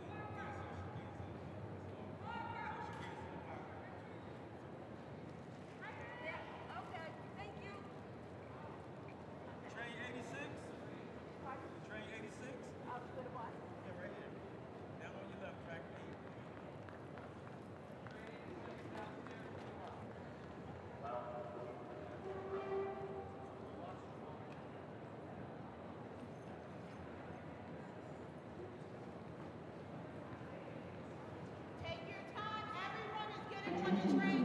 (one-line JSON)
{"title": "W 33rd St, New York, NY, United States - At Moynihan Train Hall", "date": "2022-02-24 11:31:00", "description": "At Moynihan Train Hall. Sounds of passengers rushing to the Amtrak train.", "latitude": "40.75", "longitude": "-74.00", "altitude": "23", "timezone": "America/New_York"}